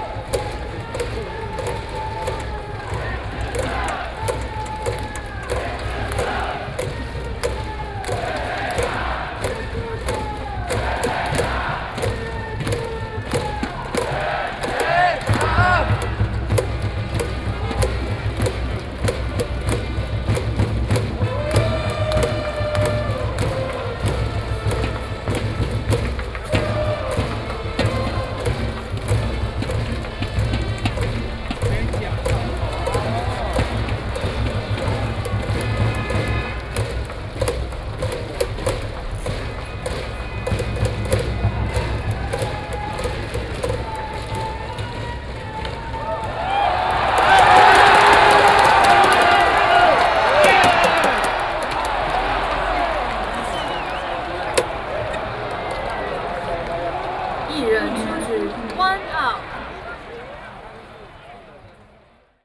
新莊區立德里, New Taipei City - Baseball field
Baseball field, Cheers and refueling sound baseball game, Binaural recordings, ( Sound and Taiwan - Taiwan SoundMap project / SoundMap20121115-31 )